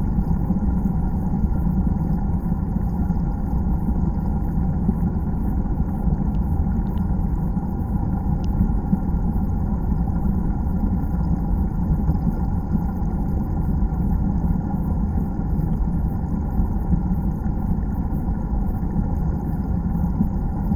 Povilai, Lithuania, small dam underwater
Hydrophones at small dam